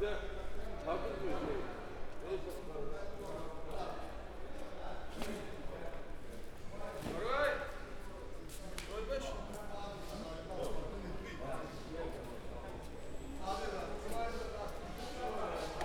Bremen, vegetable/fruit market
the halls were almost empty at that time as most business is done much earlier. people were packing their goods and cleaning was being done
Bremen, Germany, 23 July 2010, ~07:00